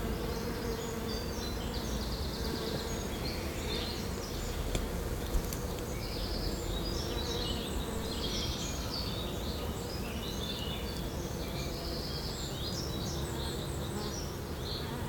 Neeravert, Londerzeel, België - Birds Bees Planes
ZOOM H6 XY 120°
Røde NTG 2 with blimp
Recorded near a roadside full of dead nettle at Boske van Neeravert